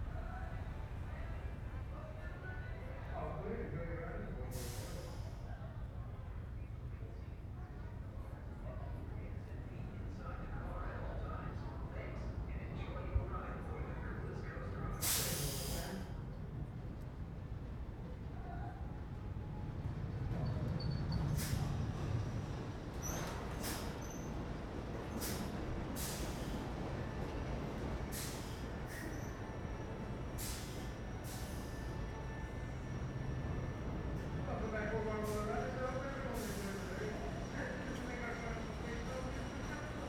*Binaural Recording* Amusement park, Roller coaster, people screaming, Harley Davidson, motorcycle.
CA-14 omnis > DR100 MK2